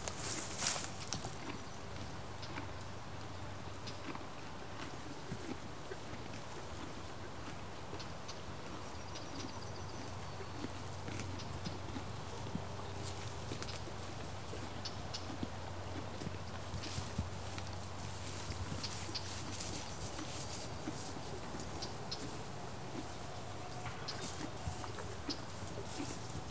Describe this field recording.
three horses spending thier Sunday in an untended orchard, moving little, chewing tussocks of grass.